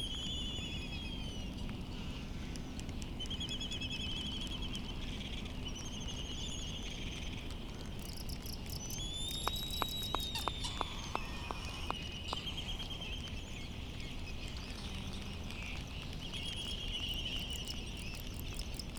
Midway Atoll soundscape ... Sand Island ... birds calls from laysan albatross ... bonin petrel ... white tern ... distant black-footed albatross ... and cricket ... open lavalier mics on mini tripod ... background noise and some wind blast ... petrels calling so still not yet light ...